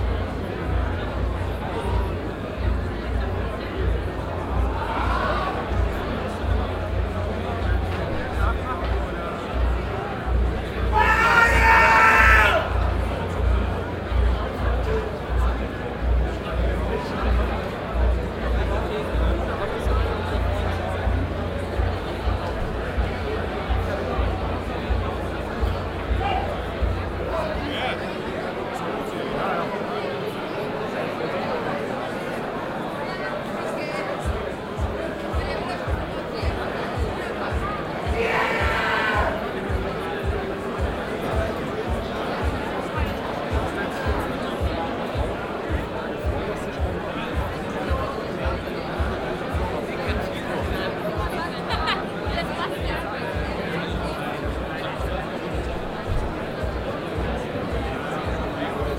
cologne, apostelnstrasse, gloria, konzertbesucher - koeln, apostelnstrasse, gloria, konzertbesucher
aufkochende publikumshysterie vor konzertbeginn anlaäslich der c/o pop 2008
soundmap nrw:
social ambiences, topographic field recordings